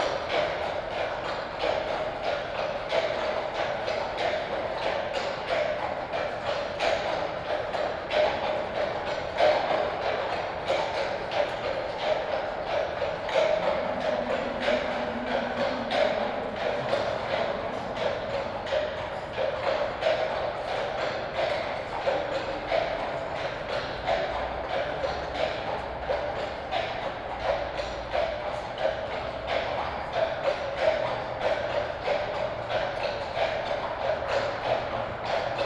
Binaural recording of a horse patrol walking into a tunnel.
Sony PCM-D100, Soundman OKM